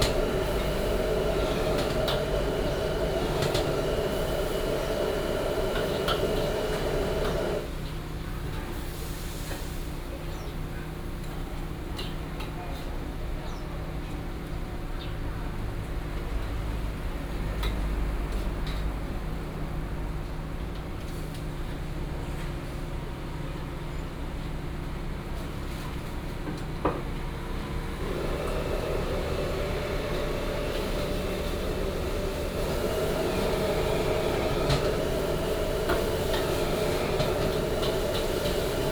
Beixing St., West Dist., Chiayi City - Lamb noodle shop
in the Lamb noodle shop, Traffic sound
Chiayi City, Taiwan